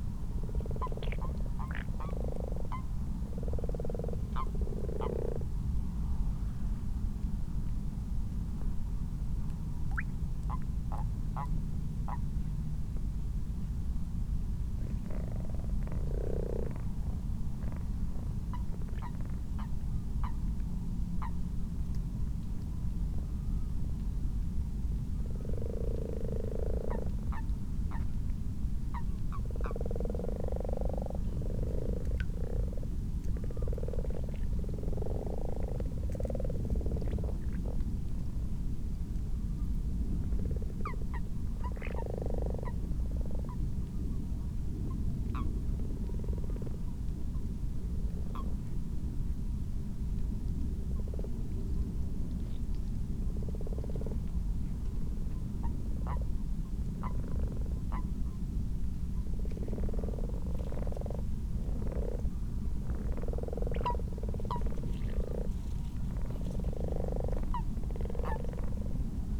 Malton, UK - frogs and toads ...
common frogs and common toads in a garden pond ... xlr sass to zoom h5 ... time edited unattended extended recording ...